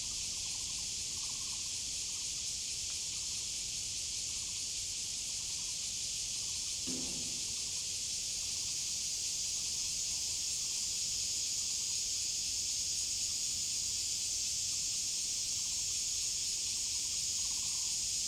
羊稠坑 Yangchoukeng, Luzhu Dist. - For high - speed rail track
For high - speed rail track, Cicada and birds sound, Dog, Chicken cry, The train runs through
Zoom H2n MS+XY